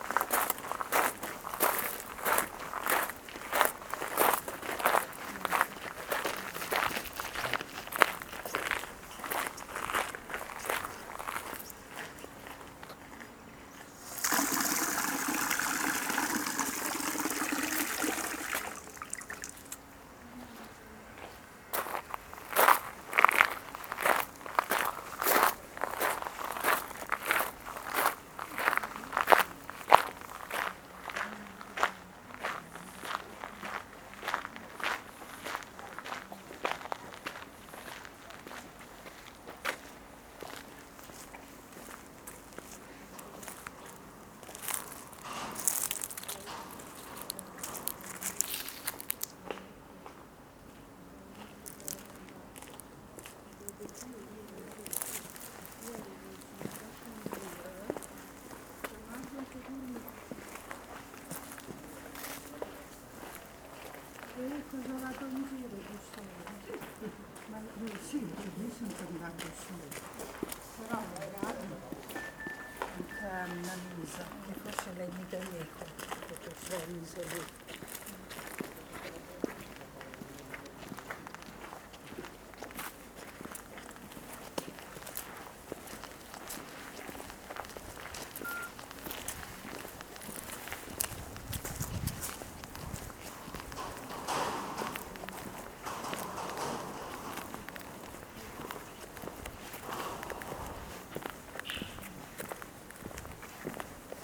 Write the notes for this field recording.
walk at the Cemetery on the 2nd of November. Several people talking and walking on the gravel